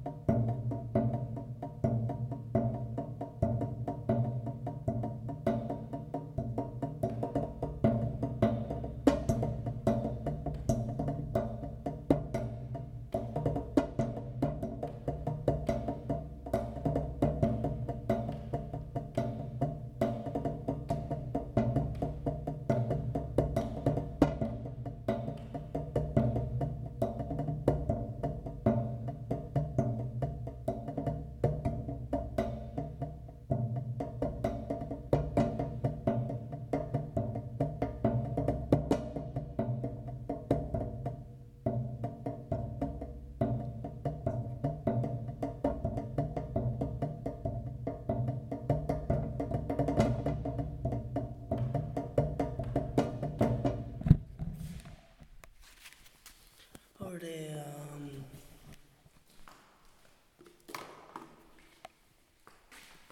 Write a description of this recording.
The recording was performed at a monastery built at the end of the majestic agiofarago gorge. Inside there is a huge door made out of steel, kind of like a prison cell door, that makes a tremendous sound. I used it as a percussive instrument to make this recording. The recorder was placed on the door.